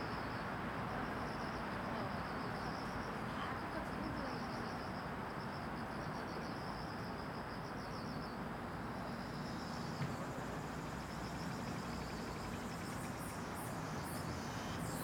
대한민국 서울특별시 서초구 반포동 1117 - Banpo Jugong Apartment, Cicada, Magpie
Banpo Jugong Apartment, Cicada, Magpie
반포주공아파트, 매미, 까치
6 September 2019, 09:50